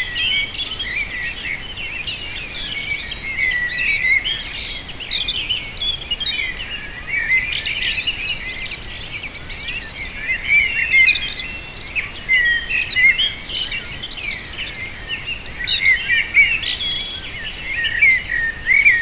Saint-Laurent-le-Minier, France - Birds on the morning
Birds awakening, early on the morning, pure poetic moment.
Montdardier, France, 12 May, ~05:00